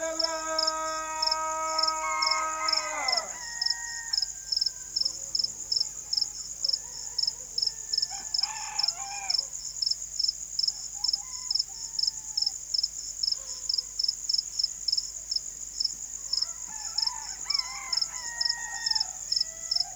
appel à la priére, dinangourou
Mali